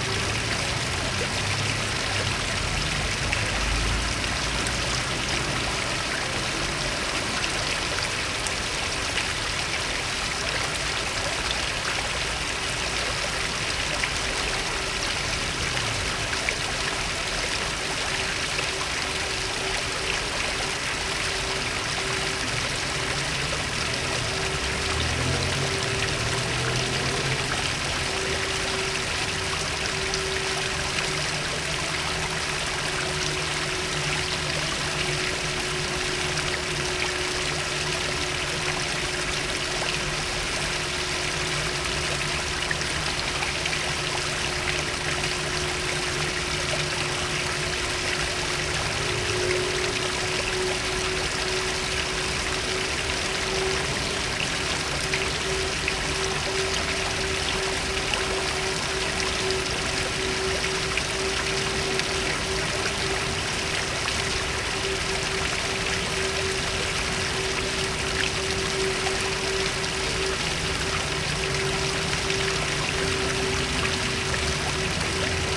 Fontaine Hotel de ville Paris
circulation
son mécanique
18 May 2010, 14:30